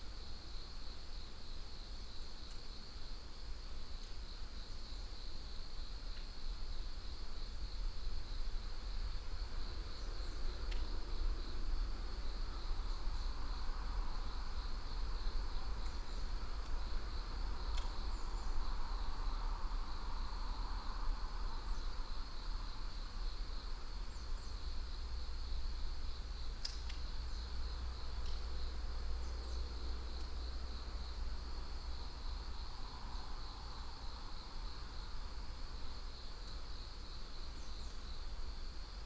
의암2터널 Uiham No.2 tunnel 150m
Daybreak visit to a decommissioned train tunnel outside Chuncheon...single track, 150 meter length section of tunnel, slight curve...fairly low resonance inside the tunnel, some interior sounds as well as sound entering from two ends...
11 September 2021, 06:10